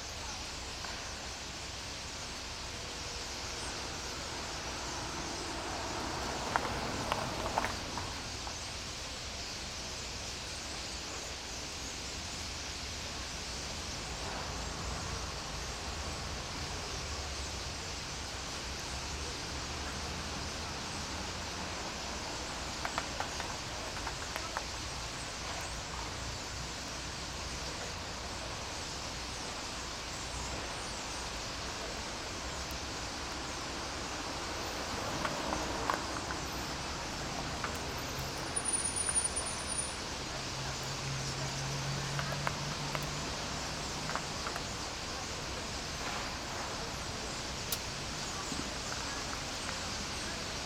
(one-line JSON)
{"title": "Rijeka, Croatia, Mrtvi Kanal Birds Fall - Immigration Birds", "date": "2012-10-20 18:30:00", "latitude": "45.33", "longitude": "14.45", "altitude": "2", "timezone": "Europe/Zagreb"}